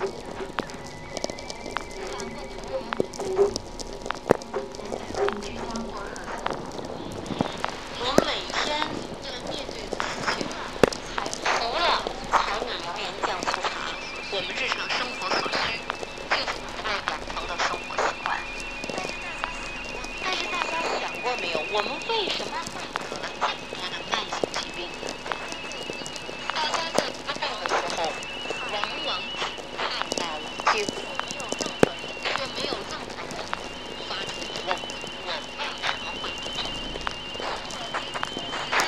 I.S.T/VLF and sw radio shooting star night in Fiac
field recording of Very low frequency mix with sw radio during the perseides night, the night of shooting star.